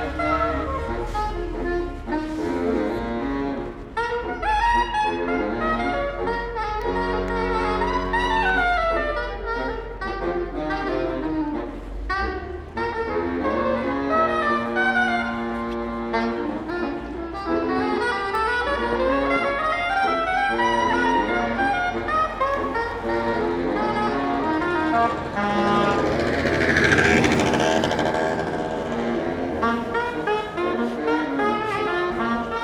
Stargarder Str., Berlin, Germany - 2 Saxophonists entertain the street from above
I just happened to be cycling past when 2 saxophonists started playing from high windows on opposite sides of the street and people stop to listen. So a hurried recording to capture the moment. Traffic still passes and there's a rare plane. My area has had none of the mass applause for health workers or coordinated bell ringing describe from elsewhere. But spontaneous individual sonic acts definitely fit with the Berlin character and this is one of them. I really like that until the applause happens in the recording you have no idea other people are there. The clapping reverberating from the walls reveals not only them but the size and dimensions of the street.